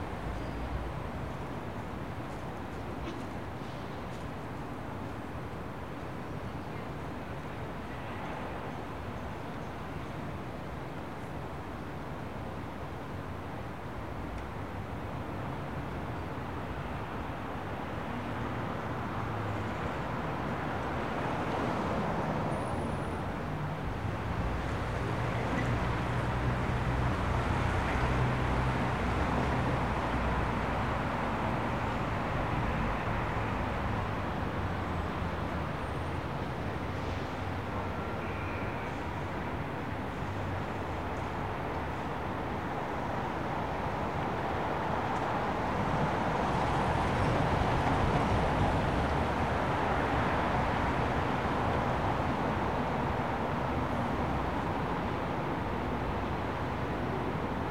An average hour on a typical day in the Seattle business district. I walked all over downtown listening for interesting acoustic environments. This one offered a tiny patch of greenery (with birds) surrounded by a small courtyard (with pedestrians) and a large angled glass bank building behind, which broke up the reflections from the ever-present traffic.
Major elements:
* Cars, trucks and busses
* Pedestrians
* Police and ambulance sirens
* Birds (seagulls and finches)
* Commercial and private aircraft